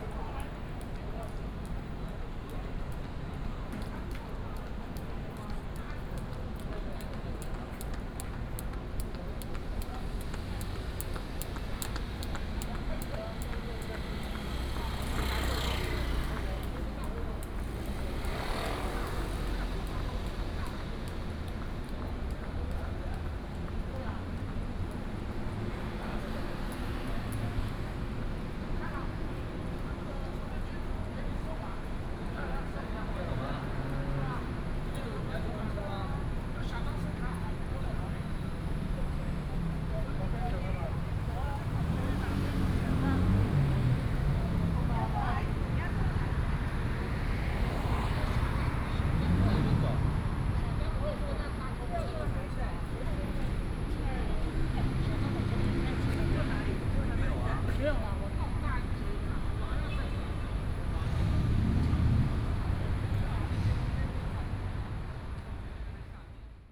{"title": "Lane, Sec., Ren’ai Rd., Da'an Dist. - walking in the Street", "date": "2015-06-26 21:05:00", "description": "Walking at night in a small alley", "latitude": "25.03", "longitude": "121.55", "altitude": "23", "timezone": "GMT+1"}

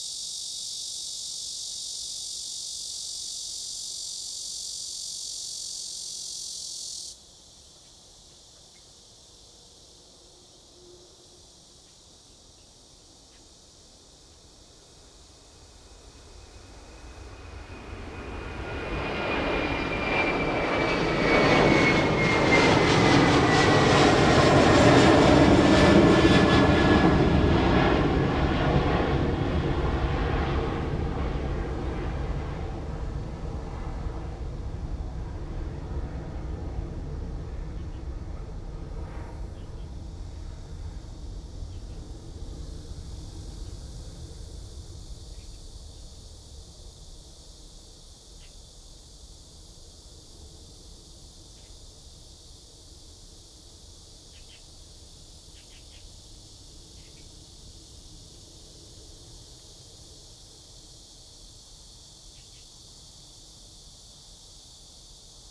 {"title": "大牛稠, Daniuchou, Dayuan Dist. - The plane took off", "date": "2017-08-01 14:39:00", "description": "Cicada cry, Bird call, Dog sounds, Near the airport runway, The plane took off\nZoom H2n MS+XY", "latitude": "25.06", "longitude": "121.23", "altitude": "34", "timezone": "Asia/Taipei"}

